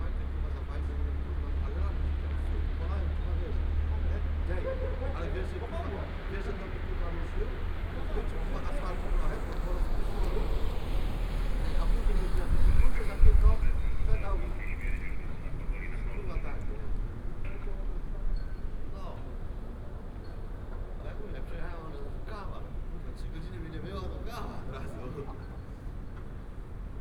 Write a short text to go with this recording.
(binaural) taxi drivers talking their taxi stories and stamping their feet in the cold. some night traffic. a few steel wires clinging on the poles. announcements from train station's megaphones. rumble of the nearby escalator.